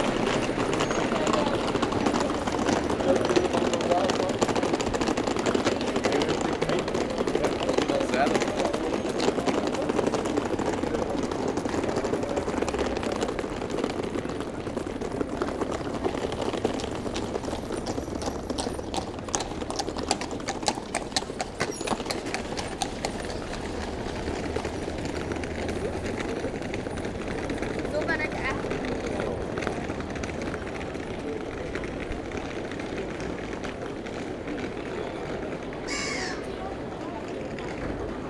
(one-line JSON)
{"title": "Brugge, België - Street musicians", "date": "2019-02-16 12:10:00", "description": "Street musician with guitar and after a walk in the center, a street musician plays hang, a rather particular rhythmic and melodious instrument. It’s the troubadour Curt Ceunen.", "latitude": "51.21", "longitude": "3.23", "altitude": "6", "timezone": "Europe/Brussels"}